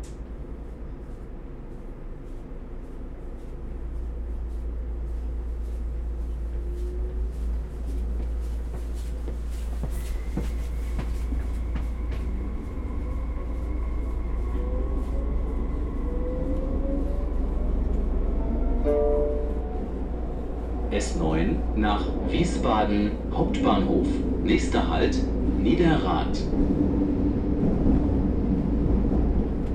S-Bahn, Am Hauptbahnhof, Frankfurt am Main, Deutschland - S-Bahn to the airport
Ride to the airport in a very empty train...
Hessen, Deutschland, 24 April 2020